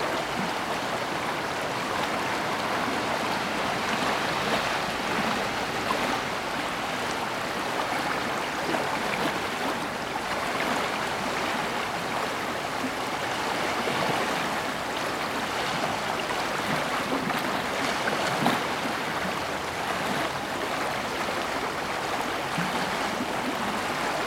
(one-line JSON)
{"title": "Rue Devant les Grands Moulins, Malmedy, Belgique - Warche river", "date": "2022-01-03 19:30:00", "description": "And a few cars on the wet road nearby.\nTech Note : Sony PCM-D100 internal microphones, wide position.", "latitude": "50.43", "longitude": "6.03", "altitude": "337", "timezone": "Europe/Brussels"}